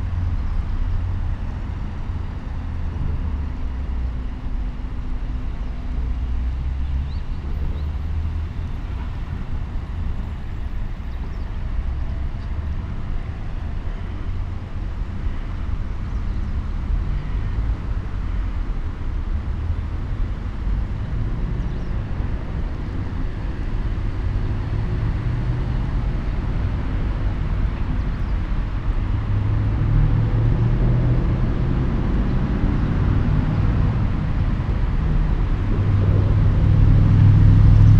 Kamogawa river, Kyoto - river flow, ambience